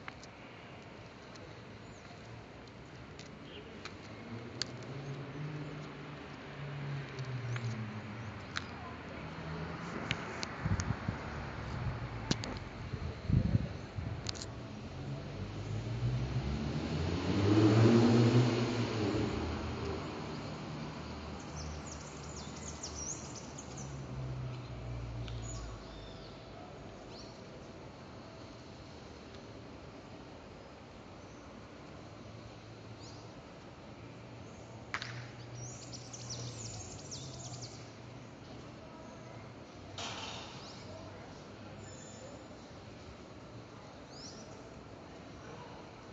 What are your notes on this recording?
APS PARA CAPTAÇÃO E EDIÇÃO DE AUDIO. PODEMOS ESCUTAR ARVORES, VENTOS, CARROS, PASSAROS E AVIÕES.